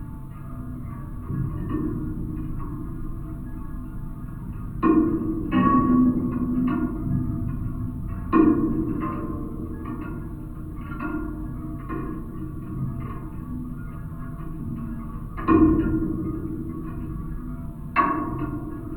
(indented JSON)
{
  "title": "Raudoniškis, Lithuania, leaking watertower stairs",
  "date": "2020-08-09 14:30:00",
  "description": "LOM geophone on an element of stairs of leaking watertower",
  "latitude": "55.43",
  "longitude": "25.68",
  "altitude": "189",
  "timezone": "Europe/Vilnius"
}